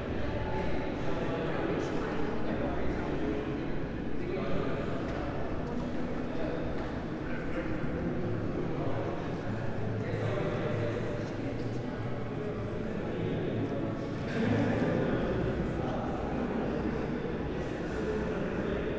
Saint Euphemia, basilica, Rovinj - after wedding
walk inside, basilica ambience, there is a nice echoing moment from outside to the inside - sounds of the crowd ...